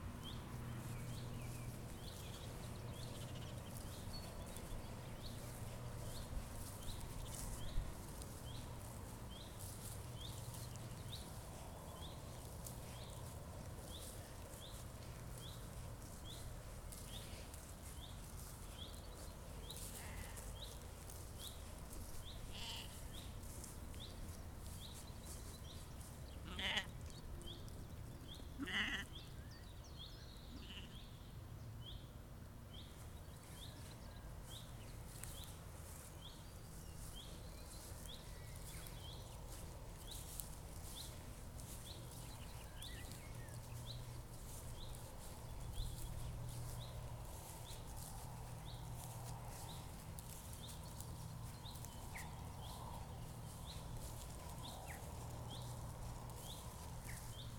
When I travelled to the Scottish Borders to run a workshop in knitting speaker pillows, I wanted to find some local fleece for making the stuffing. The fleece I found was on a nice flock of Jacob sheep, less than 10 miles from where we were staying! It's a lovely bouncy fleece, and the flock owners were really supportive of my project and allowed me to record the sheep so that I can play the sounds of the flock through the stuffing made from their wool. I love to connect places and wool in this way, and to create reminders that wool comes ultimately from the land. In this recording, the shy sheep kept evading me, as I wandered amongst them with 2 sound professional binaural microphones mounted on a twig with some cable-ties. The mics were approx 25cm apart, so not exactly stereo spaced, but hopefully give some impression of the lovely acoustics of this field, flanked on all sides with trees, and filled with ewes and their still-young lambs.